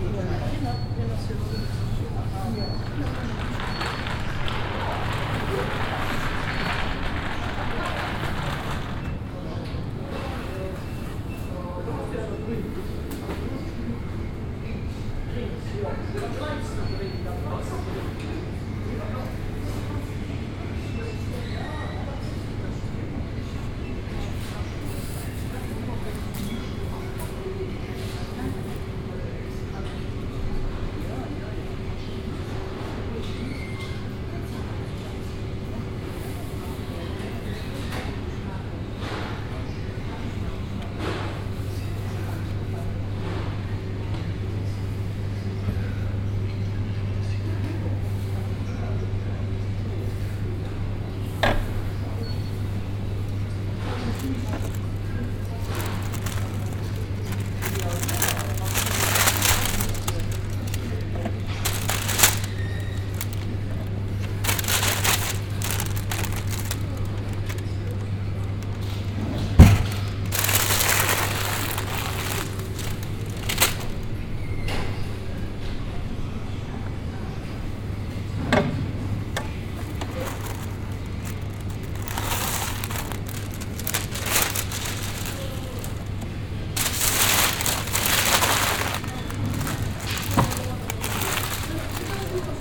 marnach, shopping center
Inside a shopping center. The opening of the automatic door, a child on an electric toy, the beeping of the counter, the sound of a paper bag, the opening and closing of a bread box, some background radio music, pneumatic air, the hum of the ventilation, the rolling of a plastic shopping cart, a french announcement, the electric buzz of the ice fridges, steps on stone floor.
Marnach, Einkaufszentrum
In einem Einkaufszentrum. Das Öffnen der automatischen Tür, ein Kind auf einem elektrischen Spielzeug, das Piepsen der Schalter, das Geräusch von einer Papiertüte, das Öffnen und Schließen einer Brotdose, etwas Radiomusik im Hintergrund, Druckluft, das Brummen der Lüftung, das Rollen von einem Einkaufswagen aus Plastik, eine französische Durchsage, das elektrische Summen der Eisschränke, Schritte auf dem Steinboden.
Marnach, centre commercial
A l’intérieur d’un centre commercial.
Marnach, Luxembourg, 17 September 2011